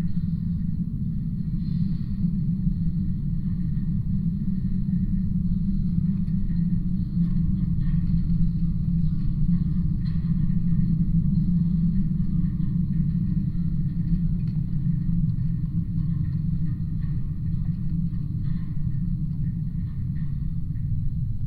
Lithuania, metallic fence
contact microphones and geophone on the fence